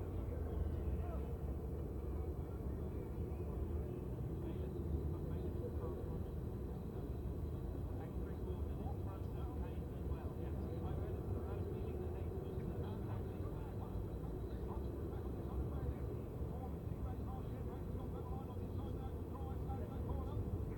World Super Bikes race one ... Dingle Dell ... Brands Hatch ... one point stereo to mini-disk ... most of race ...
Longfield, UK, 15 October